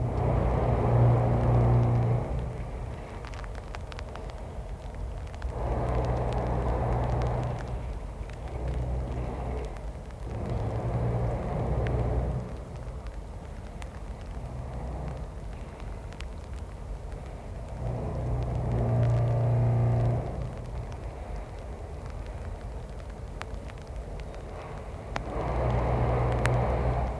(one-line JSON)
{"title": "Polerady, Czech Republic - Insistent sound from the Best factory with rain", "date": "2016-10-20 18:53:00", "description": "Recorded on a very dark wet October evening. The rain is hitting my coat, which leaks. Best make stone, tiles and concrete architectural products.", "latitude": "50.43", "longitude": "13.65", "altitude": "262", "timezone": "Europe/Prague"}